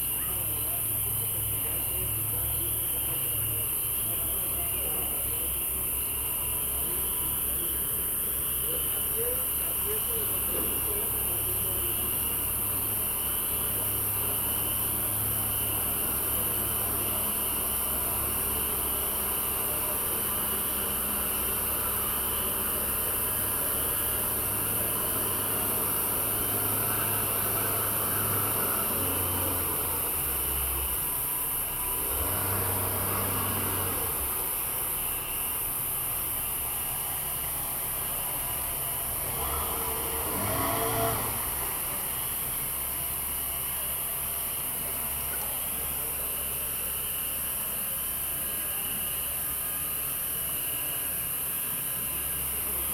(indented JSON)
{
  "title": "Cra., Mompós, Bolívar, Colombia - La albarrada",
  "date": "2022-04-19 19:51:00",
  "description": "En las noche, a orilla del río, junto al edificio de La Aduana se escachan los grillos y los paseantes que circulan por este paso peatonal.",
  "latitude": "9.24",
  "longitude": "-74.42",
  "altitude": "12",
  "timezone": "America/Bogota"
}